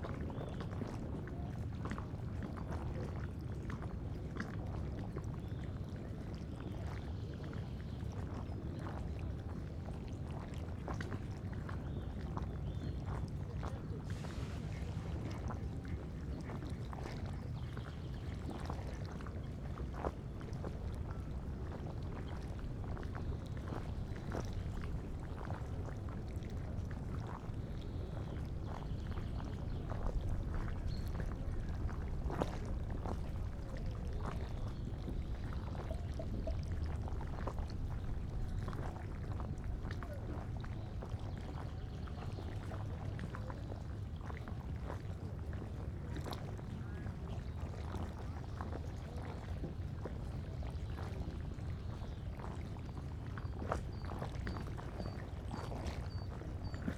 Langer See, river Dahme, near Grünau, Berlin - river side ambience
forest at lake Langer See, waves lapping gently at the bank, distant drone of a boat
(SD702, NT1A)
Berlin, Germany, 30 March, ~12:00